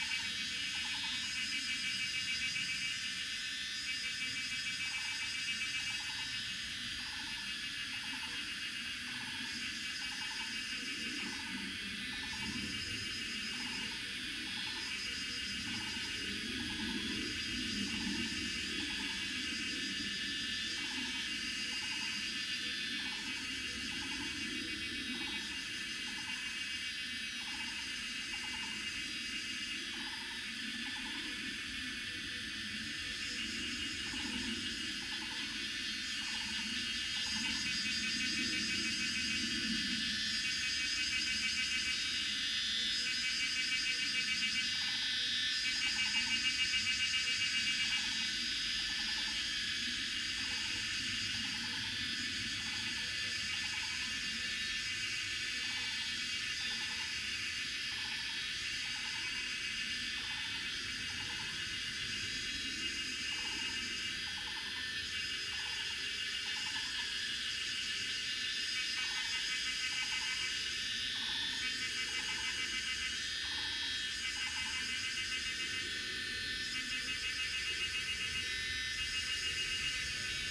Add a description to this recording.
Cicada sounds, Bird sounds, Zoom H2n MS+XY